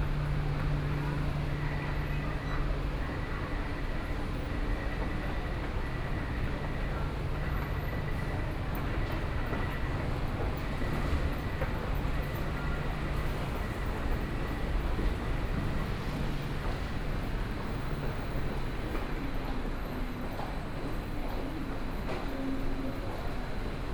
106台灣台北市大安區 - Wenshan Line (Taipei Metro)
from Technology Building Station to Zhongxiao Fuxing station, Binaural recordings, Sony PCM D50 + Soundman OKM II
Taipei City, Taiwan, 28 October 2013, 15:46